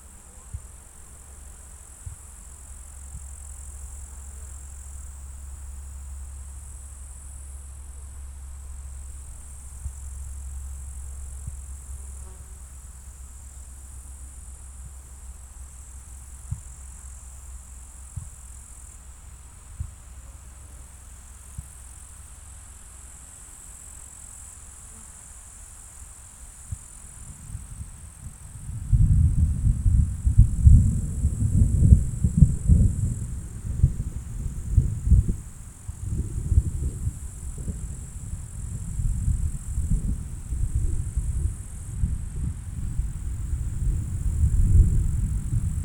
field and thunder, Latvia
thunder storm approaching
July 2010